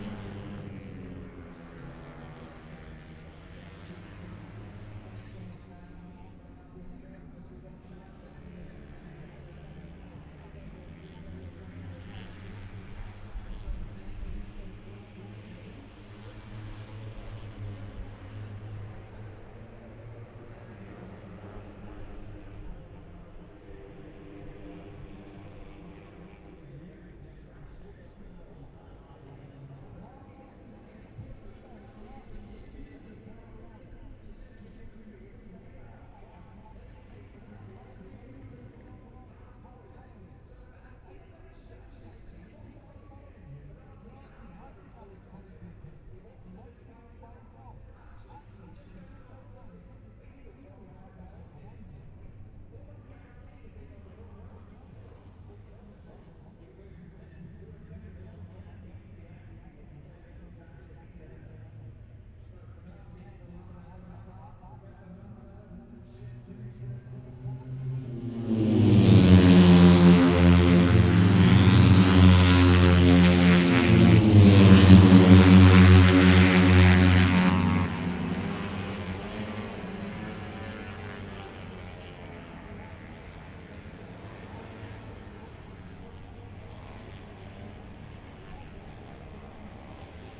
Silverstone Circuit, Towcester, UK - british motorcycle grand prix 2021 ... moto three ...
moto three qualifying two ... wellington straight ... olympus ls 14 integral mics ...
England, United Kingdom